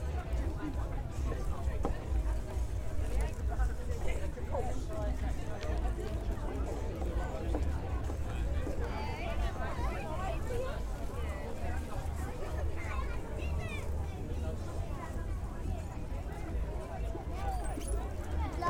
A walk along Brighton Pier April 26th 2008 3pm.